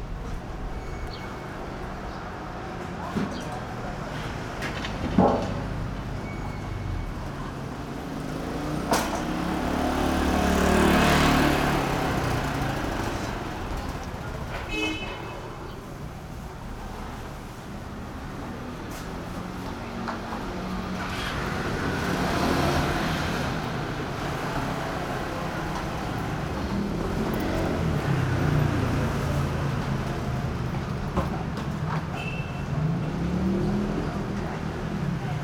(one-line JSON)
{"title": "Zhongzheng Rd., 淡水區, New Taipei City - Entrance to traditional markets", "date": "2012-04-04 06:27:00", "description": "Entrance to traditional markets, Road around the corner, Discharge, Small alley, Traditional Market, Traffic Sound\nSony PCM D50", "latitude": "25.17", "longitude": "121.44", "altitude": "18", "timezone": "Asia/Taipei"}